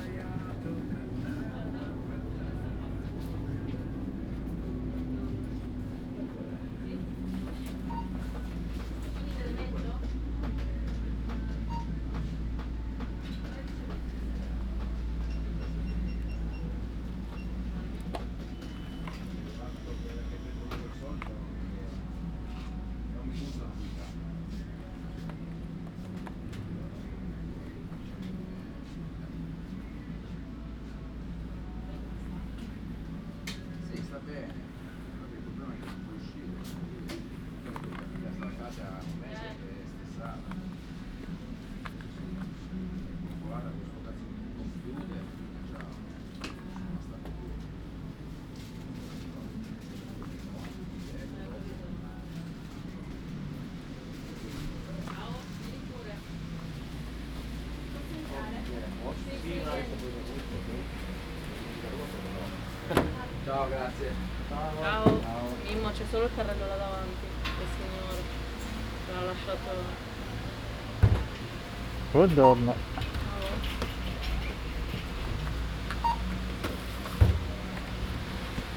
Ascolto il tuo cuore, città. I listen to yout heart, city. Several chapters **SCROLL DOWN FOR ALL RECORDINGS** - Shopping Tuesday afternoon in the time of COVID19 Soundwalk
"Shopping Tuesday afternoon in the time of COVID19" Soundwalk
Chapter XXIX of Ascolto il tuo cuore, città, I listen to your heart, city
Tuesday March 31 2020. Shopping in the supermarket at Piazza Madama Cristina, district of San Salvario, Turin 22 days after emergency disposition due to the epidemic of COVID19.
Start at 4:07 p.m., end at h. 4:56 p.m. duration of recording 48’43”
The entire path is associated with a synchronized GPS track recorded in the (kml, gpx, kmz) files downloadable here: